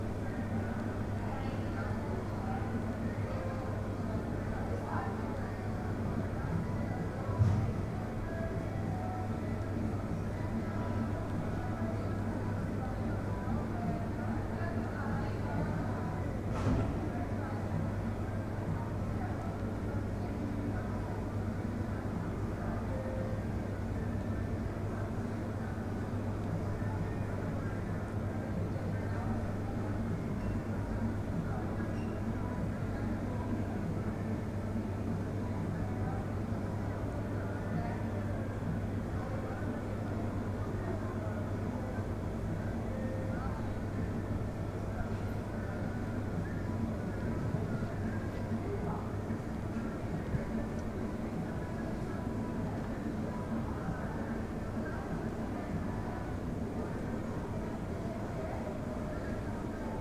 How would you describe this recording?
one minute for this corner: Tkalski prehod